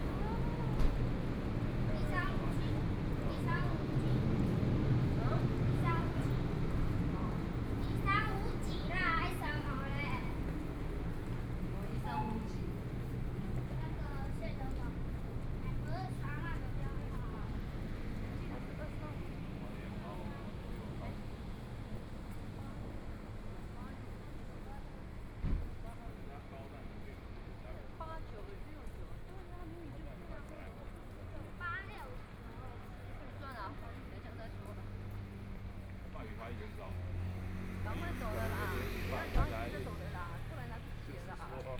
Lane, Section, Zhōngyāng North Rd, Beitou - Mother and child
Mother and child, Walking on the road, Traffic Sound, Binaural recordings, Zoom H6+ Soundman OKM II